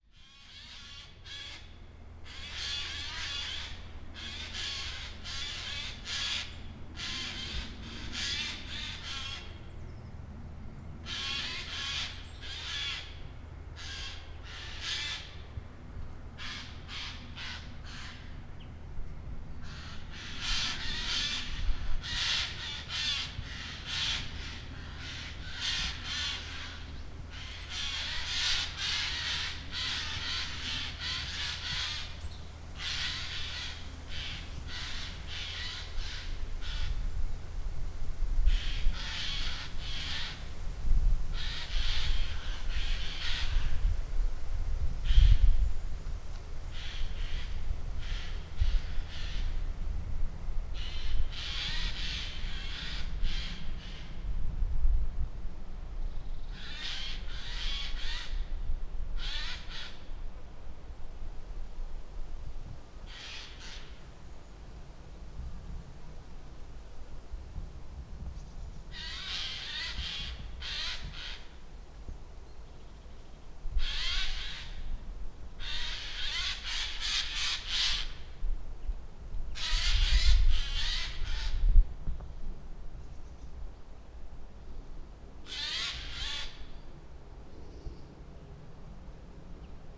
magpies having an argument high in the trees. their screams reverberate in the nearby forest. (sony d50)
26 September, 11:43